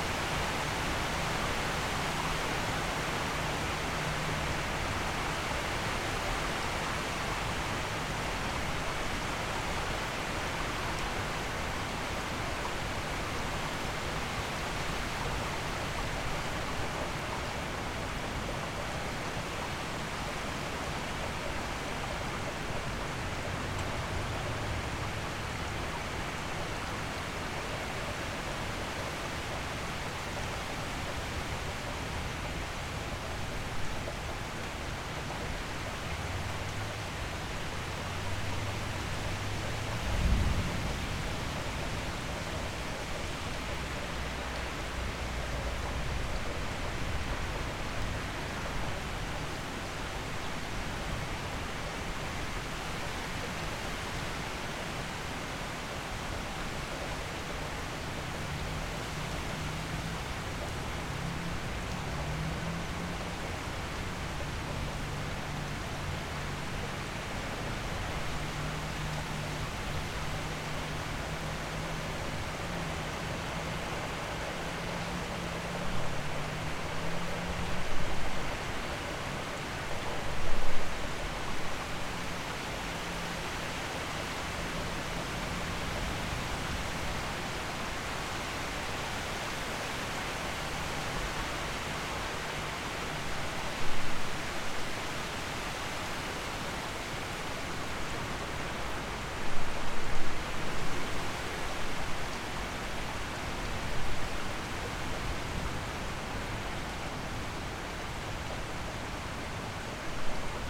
Dekerta, Kraków, Poland - (812 XY) Heavy rain with hailstone
Recording of heavy rain with hailstone.
Recorded with Rode NT4 on Sound Devices MixPre3-II.
województwo małopolskie, Polska, 24 June